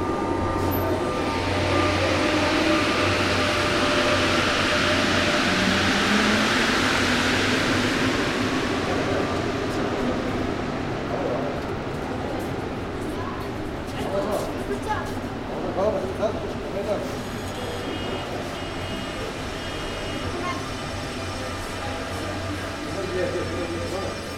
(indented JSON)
{
  "title": "Hôtel de Ville L. Pradel, Lyon, France - Métro lyonnais",
  "date": "2003-09-30 13:40:00",
  "description": "Près du distributeur de ticket dans le métro . Arrivée départ des rames, bruits du distributeur pas des passants. Extrait CDR gravé en 2003.",
  "latitude": "45.77",
  "longitude": "4.84",
  "altitude": "182",
  "timezone": "Europe/Paris"
}